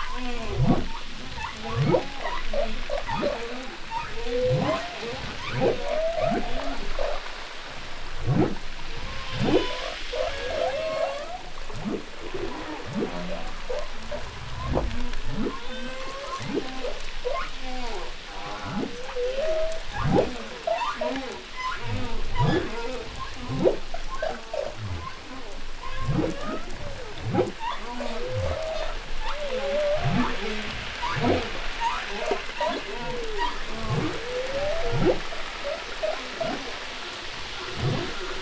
humpback whales, Boucan cannot, ile de la reunion
19 September 2010, 11:44